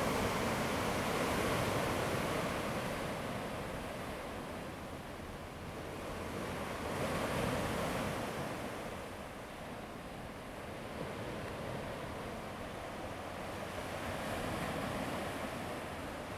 新社村, Fengbin Township - Sound of the waves
Small towns, Traffic Sound, Sound of the waves, Very Hot weather
Zoom H2n MS+XY
Hualien County, Fengbin Township, 花東海岸公路, 28 August 2014